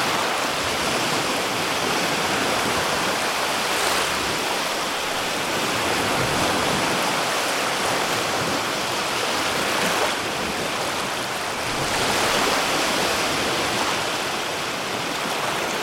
Baltic shore on mid-summer day 2004